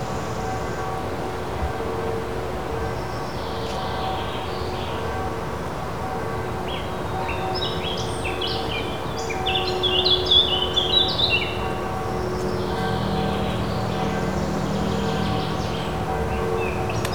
Poznan, Naramowice district, nature reserve "Zurawiniec" - bells and trees
pulsing sound of distant church bells spilling over the trees. peaceful, rustling forest ambience on a sunny Sunday.